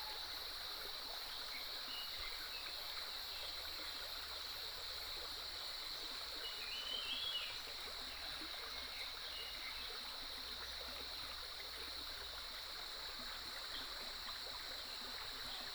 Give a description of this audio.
Walking along the stream, The sound of water streams, Bird calls, Crowing sounds, Cicadas cry